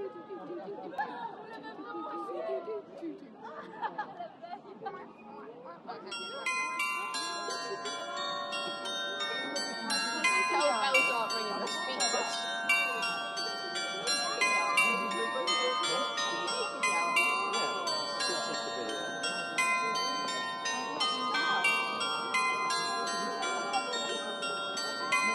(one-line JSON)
{"title": "Am Markt, Hameln, Germany PIED PIPER CHIMES PLAY (Rattenfänger Glockenspiel) - PIED PIPER CHIMES PLAY (Rattenfänger Glockenspiel)", "date": "2017-06-22 15:56:00", "description": "Sound Recording of \"PIED PIPER CHIMES PLAY\" (Rattenfänger Glockenspiel) in the center of Hameln every morning. Tourist attraction based of history of Hameln and Pied Piper story with rats. These bells represent part of the story. In the sound recording is heard the performance of bells & pied piper flute.\nRecorded with my first recorder ZOOM H4n PRO\nExternal Binaural Microphones", "latitude": "52.10", "longitude": "9.36", "altitude": "74", "timezone": "Europe/Berlin"}